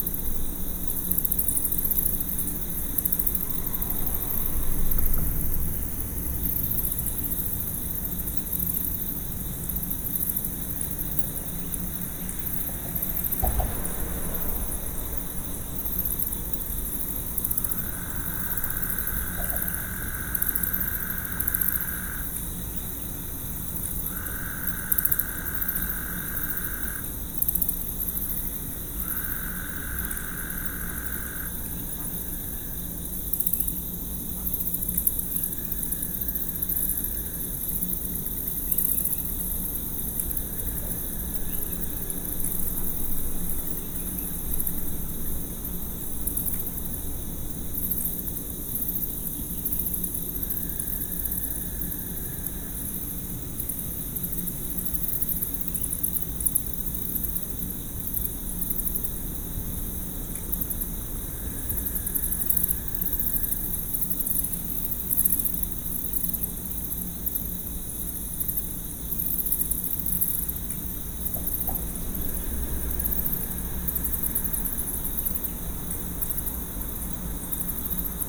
WLD 2012: Bat swarm under the Waugh bridge, Houston, Texas - WLD: 2012: Buffalo Bayou's Night Buddies
Midnight on the bayou after many consecutive days of rain, under the Waugh Dr. bridge, Houston, Texas. Mexican Freetail bats, roaches, insects, crickets, frogs, night herons, cars, traffic..
Church Audio CA-14 omnis + binaural headset > Tascam DR100 MK-2